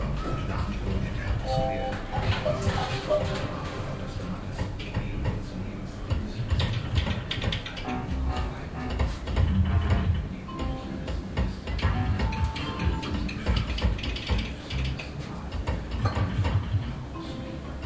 heiligenhaus, spielsalon
aufnahme in einem der zahlreichen lokalen spielsalons, der direkt an der den ort zerteilenden hauptstarsse liegt
project: social ambiences/ listen to the people - in & outdoor nearfield recordings
hauptstrasse, spielsalon